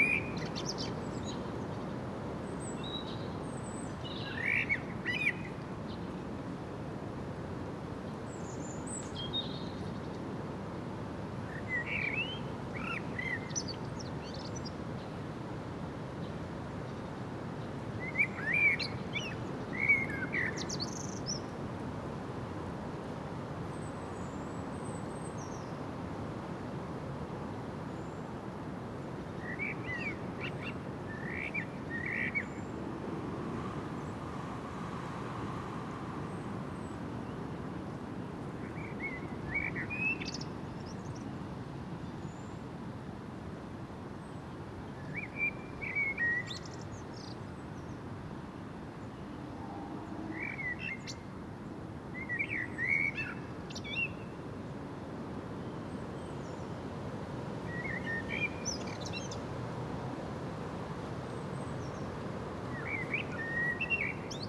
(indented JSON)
{
  "title": "Unnamed Road, Kronshagen, Deutschland - Blackbirds and wind at dusk",
  "date": "2019-03-17 17:50:00",
  "description": "Evening around sunset on a windy day, footway along a railroad embarkment beside dwellings, blackbirds singing and calling, constant wind in the populus and other trees as well as omnipresent distant traffic noise. Tascam DR-100 MK III built-in uni-directional stereo mics with furry wind screen. 120 Hz low-cut filter, trimmed and normalized.",
  "latitude": "54.34",
  "longitude": "10.09",
  "altitude": "25",
  "timezone": "Europe/Berlin"
}